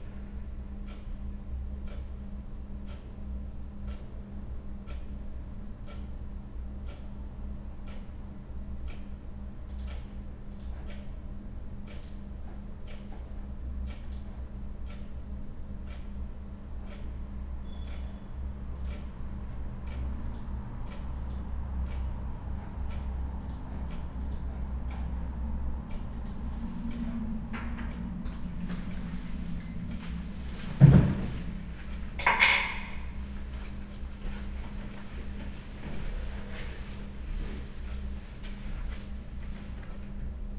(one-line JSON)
{"title": "Bielawska, Dzierżoniów, Polen - Hotel foyer", "date": "2018-10-21 10:55:00", "description": "Hotel Delta in, Dzierżoniów, former Reichenbach, waiting...\n(Sony PCM D50)", "latitude": "50.73", "longitude": "16.66", "altitude": "281", "timezone": "GMT+1"}